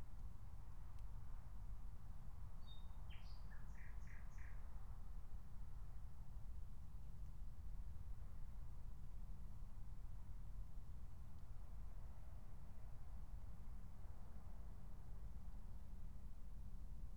Berlin, Tempelhofer Feld - former shooting range, ambience
03:00 Berlin, Tempelhofer Feld